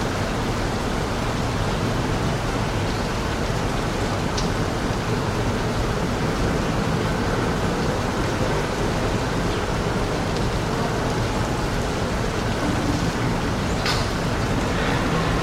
The Rain (ฝน) Goes from A Lot to a Little in 20 Minutes
The rain was falling heavily in Bangkok on World Listening Day 2010. It slowed and then stopped. WLD